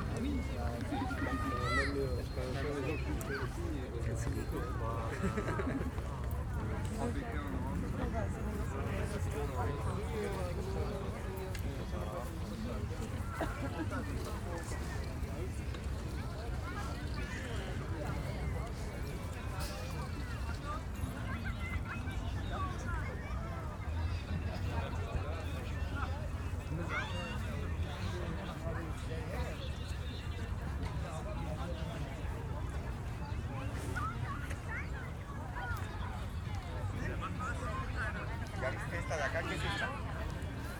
Tempelhofer Feld, Berlin - urban gardening project
walk through the self organized urban gardening project at former Tempelhof airport, on a beautiful summer sunday evening.
(SD702, DPA4060)